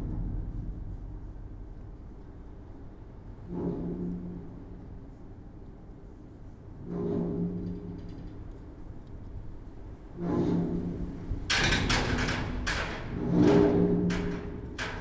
In an abandoned coke plant, every landscape is extremely glaucous. Today its raining and theres a lot of wind. A semi-destroyed metallic door slams in the squall.